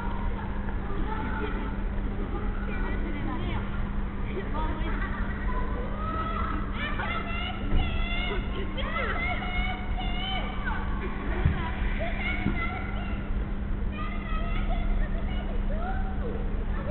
children at school at aoyama rec. by I.Hoffmann